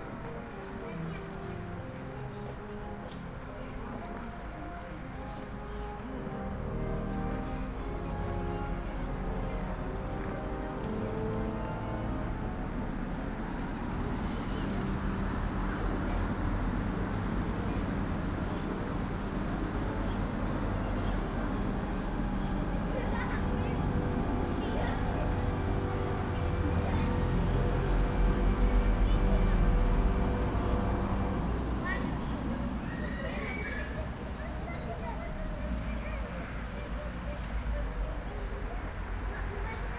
Lithuania. Leliunai, outside the church
Lietuva, European Union, June 2013